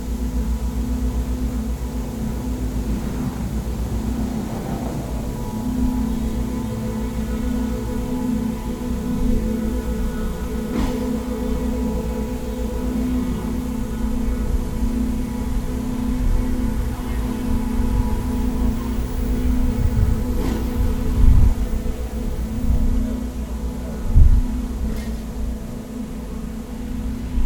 trafacka, sound performace
sound of the music performance penetrating the walls of the building mixing with ambience.
14 July 2011, ~20:00